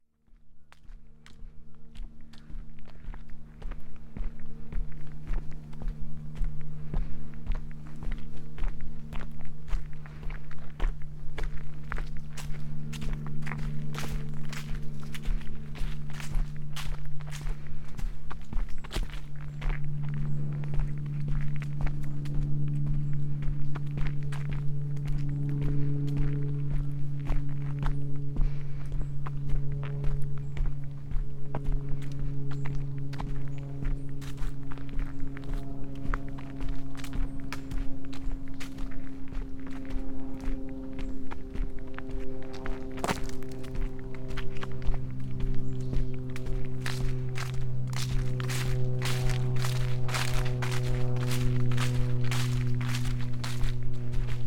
walk with surprise, Šturmovci, Slovenia - moment
walk with surprise - rise of a partridge